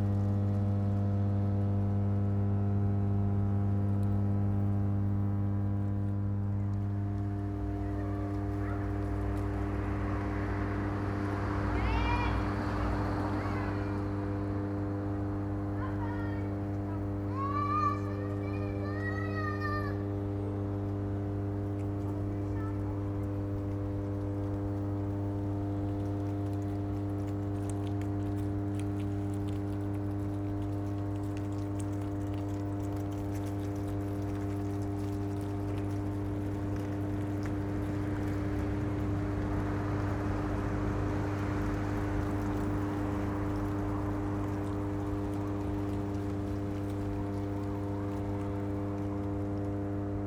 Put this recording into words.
Neighborhood hum with traffic and a few dead leaves blowing in the wind. An almost unnoticed sound.